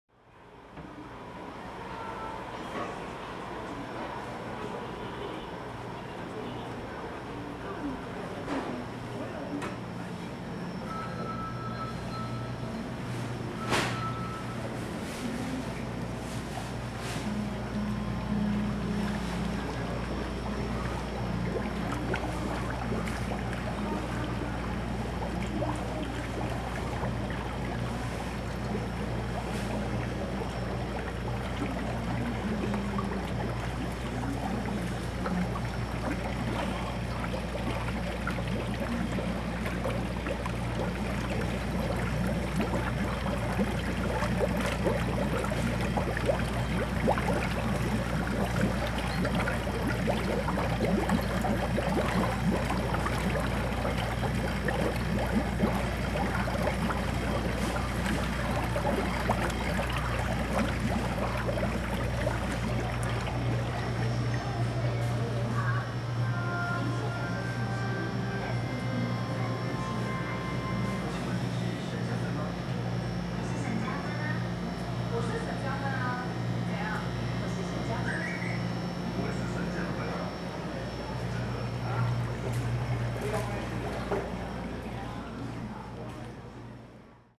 Ln., Sec., Xingnan Rd., Zhonghe Dist. - Walking in the market
Walking in the market, Suspension of business in the market, Sony ECM-MS907+Sony Hi-MD MZ-RH1
New Taipei City, Taiwan, 14 February 2012